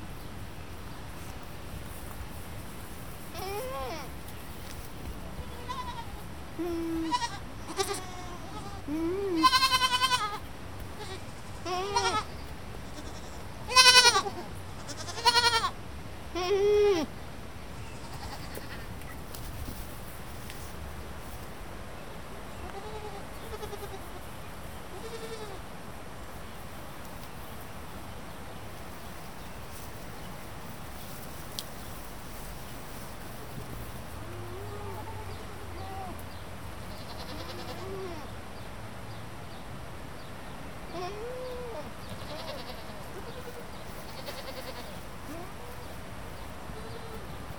Lukezi, Grobnik, goats and lambs
Goats and lambs, river in background..
6 June 2010, ~19:00